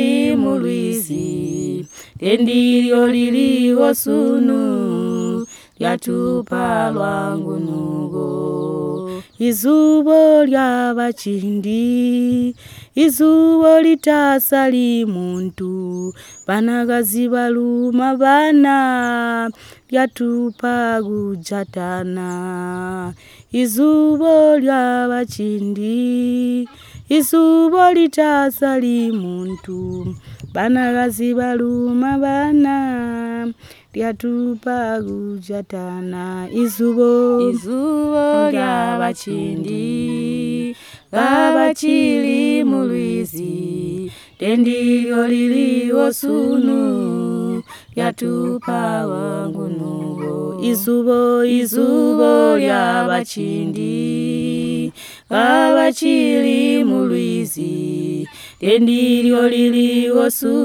Sikalenge, Binga, Zimbabwe - Lucia and Virginia sing the Zubo song...
After a long interview recording, Lucia Munenge and Virginia Mwembe are singing a song together which tells the story, vision and achievements of “Zubo”, from the traditional fishing-baskets of the BaTonga women to the formation of Zubo Trust as an organisation whose vision is based on the same principle of women working together in teams to support themselves, their families and the community at large..
a recording by Lucia Munenge, Zubo's CBF at Sikalenge; from the radio project "Women documenting women stories" with Zubo Trust, a women’s organization in Binga Zimbabwe bringing women together for self-empowerment.